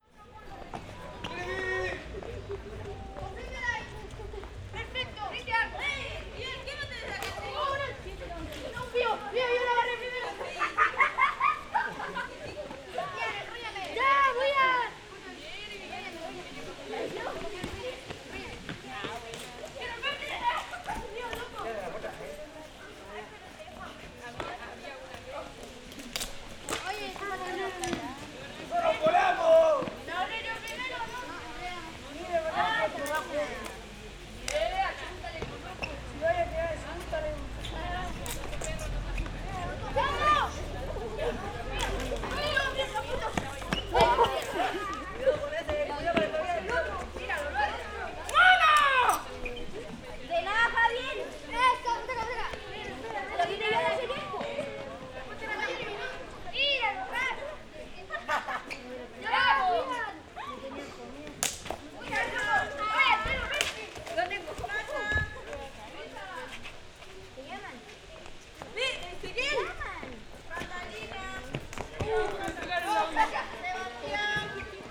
Plaza el Descanso, Valparaíso, Chile - school kids playing
Plaza el Descanso, kids from the nearby school playing and training. During daytime, the place is used as a sort of schoolyard, for breaks and exercises.
Región de Valparaíso, Chile, 26 November 2015, 16:25